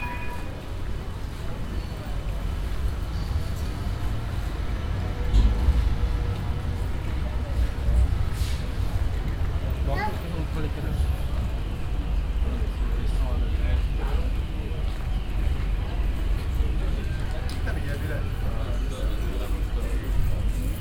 amsterdam, singel, flower market
the flower market on a sunday morning - tourists shopping, several languages - in the distance the hooting of a boat on the nearby heerengracht channel
international city scapes - social ambiences and topographic field recordings
Amsterdam, The Netherlands, 6 July 2010